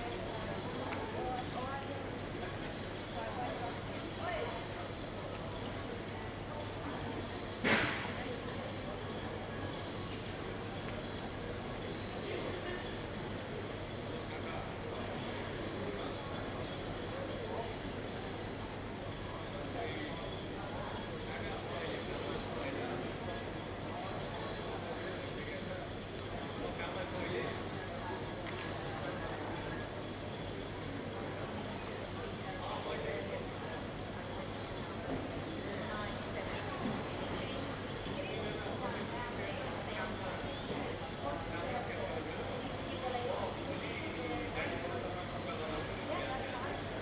Terminal, Perth Airport WA, Australia - Cafe Ambience, Arrivals, Terminal 4, Perth Airport, Western Australia.
Sitting at a café between Qantas bag check-in and arrivals, having a scotch. Terminal 4 handles most domestic flight arrivals.